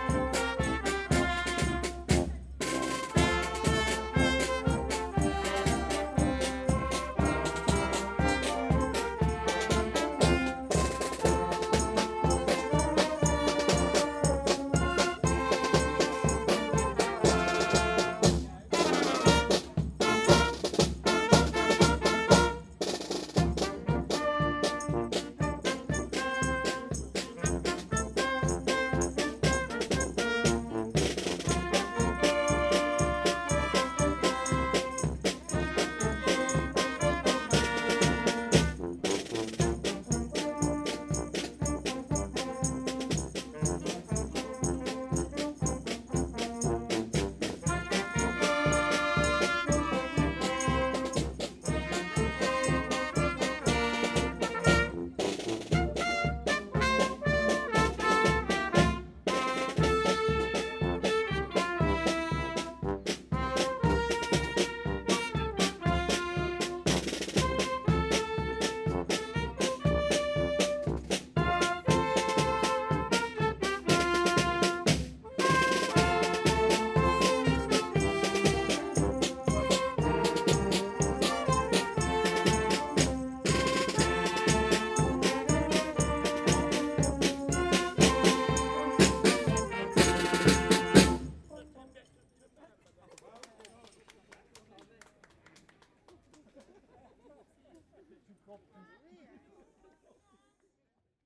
Court-St.-Étienne, Belgique - Fanfare
During the annual feast of Court-St-Etienne, the local fanfare is playing, walking in the streets. This is called : La fanfare de Dongelberg.
Court-St.-Étienne, Belgium, 11 June, ~11:00